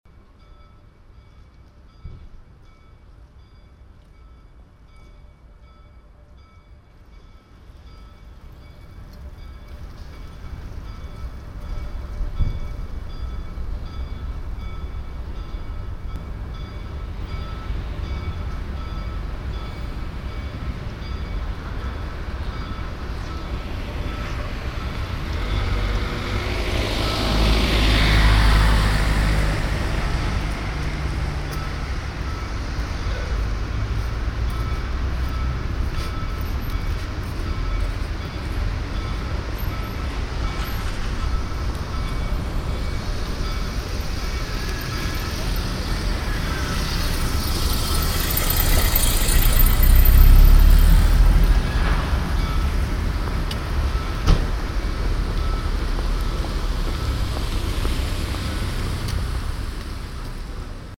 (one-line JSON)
{"title": "cologne, karthäuser wall, bell of nearby cloister and traffic", "date": "2009-08-02 15:18:00", "description": "cloister bells vanishing in the street traffic\nsoundmap nrw: social ambiences/ listen to the people in & outdoor topographic field recordings", "latitude": "50.92", "longitude": "6.96", "altitude": "53", "timezone": "Europe/Berlin"}